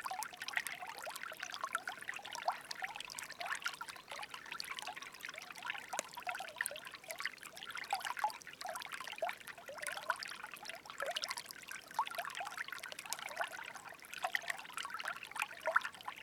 Lithuania, near Utena, happy waters
every trench is alive